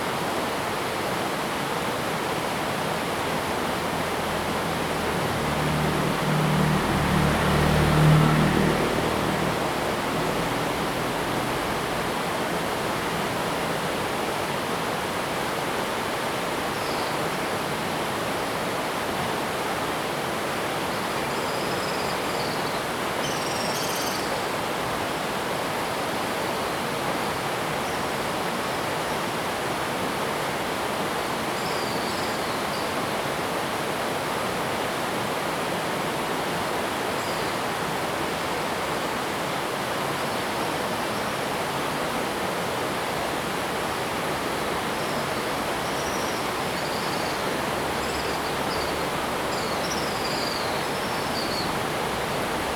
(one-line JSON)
{"title": "埔里鎮桃米里, Nantou County - Swallow sounds", "date": "2015-08-13 06:07:00", "description": "Swallow sounds, Traffic Sound, The sound of water streams\nZoom H2n MS+XY", "latitude": "23.94", "longitude": "120.93", "altitude": "464", "timezone": "Asia/Taipei"}